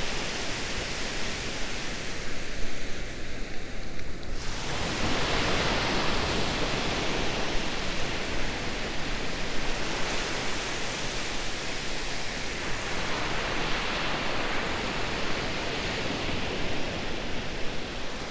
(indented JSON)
{
  "title": "Hunting Island, SC, USA - Hunting Island South Beach",
  "date": "2021-12-26 15:39:00",
  "description": "Gentle ocean surf on Hunting Island State Park's south beach. The wind and currents were calmer than usual on this day. People can be heard walking past the rig, and sounds from behind the recorder can be heard.\n[Tascam Dr-100mkiii & Primo EM-272 omni mics]",
  "latitude": "32.36",
  "longitude": "-80.44",
  "timezone": "America/New_York"
}